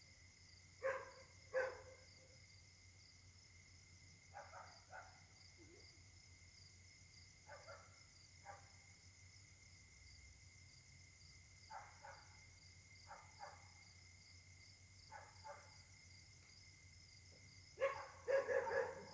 Caltex, mares, Réunion - 2019-01-20 23h08
La nuit s'annonce difficile pour les habitants: concert de chiens.
Micro: smartphone Samsung Galaxy s8, le micro de gauche tend à être encrassé. Essai pour voir si c'est acceptable.
20 January 2019